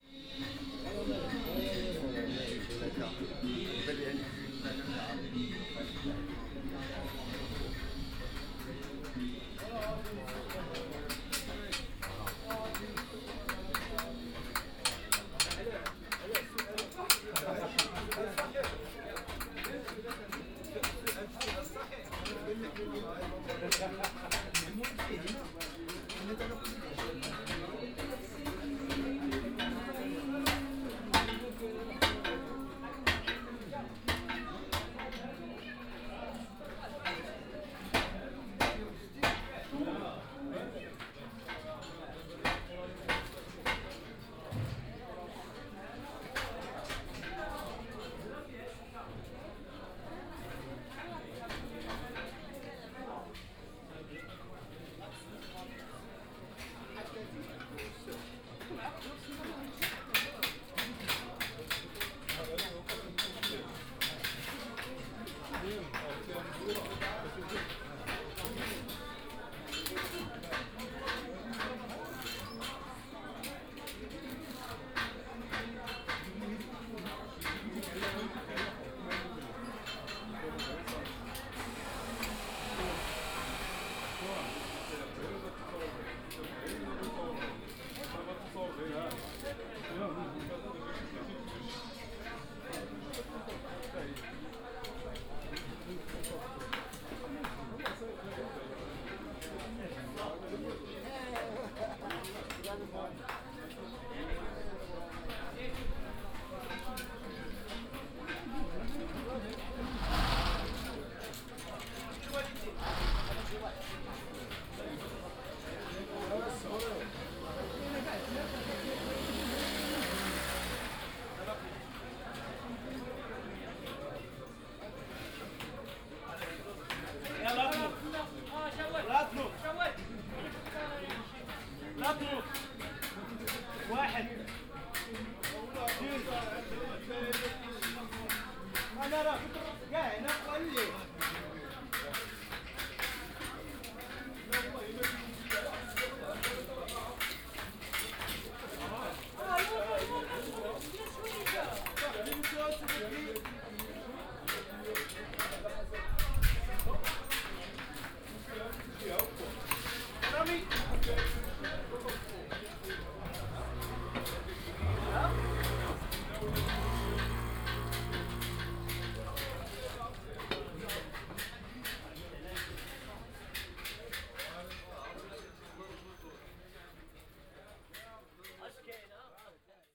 Souk Haddadine, Marrakesch, Marokko - metal workers, ambience
blacksmith at work in the Haddadine (metalworkers) souk. the location is guessed, it's almost impossible (and a nice experience) to find the exact position within this labyrinth of markets and narrow streets.
(Sony PCM D50, OKM2)